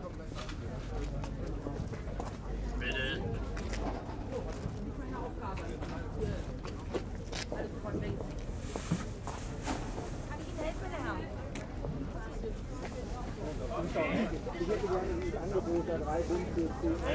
berlin: winterfeldtplatz - the city, the country & me: weekly market
flower market stall
the city, the country & me: february 15, 2014
Berlin, Germany